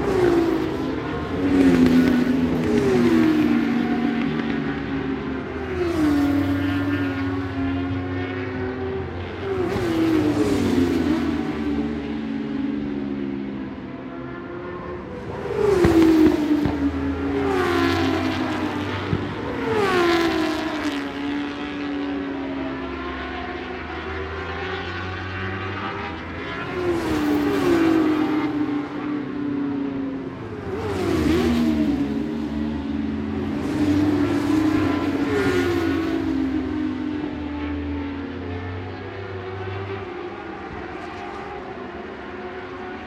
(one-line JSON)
{"title": "Scratchers Ln, West Kingsdown, Longfield, UK - BSB 2005 ... Superbikes ... FP2 contd ...", "date": "2005-03-26 15:30:00", "description": "British Superbikes 2005 ... Superbikes ... FP2 contd ... one point stereo mic to minidisk ...", "latitude": "51.36", "longitude": "0.26", "altitude": "133", "timezone": "Europe/London"}